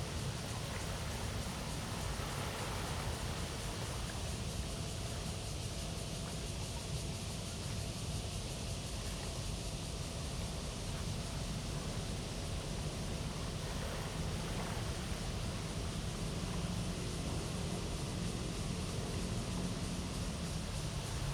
Tamsui River, New Taipei City - Sitting on the river bank
Sitting on the river bank, Cicadas cry, The sound of the river
Zoom H2n MS+XY
New Taipei City, Taiwan, 18 July 2015, 06:39